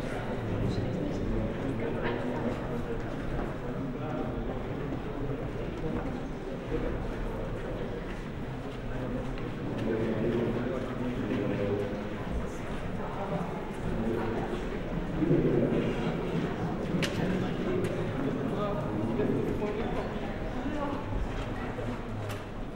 dahlwitz-hoppegarten: galopprennbahn, wetthalle - the city, the country & me: racecourse, betting hall
before and between the fifth race (bbag auktionsrennen), betting people
the city, the country & me: may 5, 2013